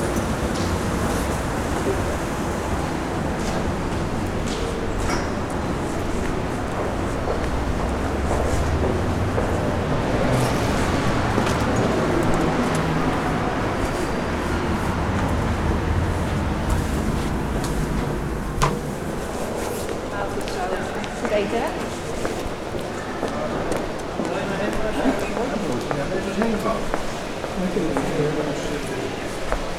Rotterdam, Beursplein, WTC - a walk through world trade center
A short walk through the Rotterdam World Trade Center.
Starting at the outside elevator stairs, then passing through the main hall with an indoor fountain, passed the elevator room back to the exit. WLD
Rotterdam, The Netherlands, 7 December 2009, 12:30